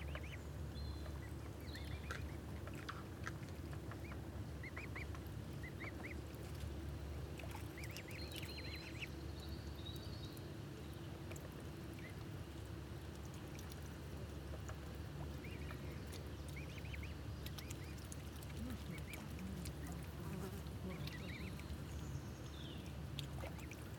This is the sound of six cygnets, recently born to a pair of swans whose nest is up in the top lake. Their tiny sounds and beautiful little fluffy bodies hold huge appeal for everyone who is excited about the arrival of spring. Many people were taking photos of the swans and stopping to admire the little family. Recorded with a pair of Naiant X-X microphones.